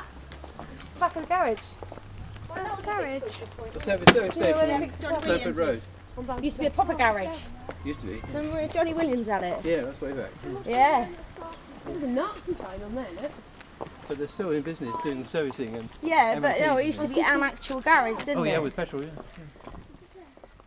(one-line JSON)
{"title": "Walk Three: Back of the garage", "date": "2010-10-04 16:38:00", "latitude": "50.39", "longitude": "-4.11", "altitude": "84", "timezone": "Europe/London"}